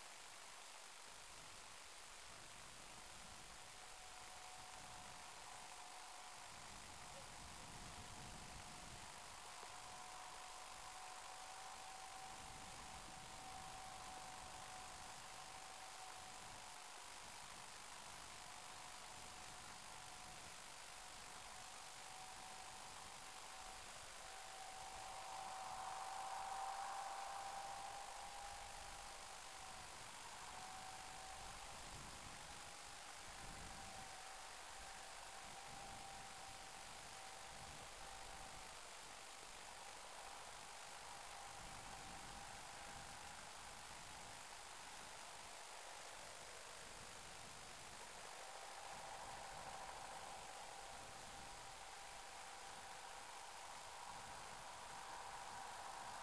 under the high voltage lines, Utena, Lithuania
snow is falling on the crackling high voltage lines...tractor passing by my recorder...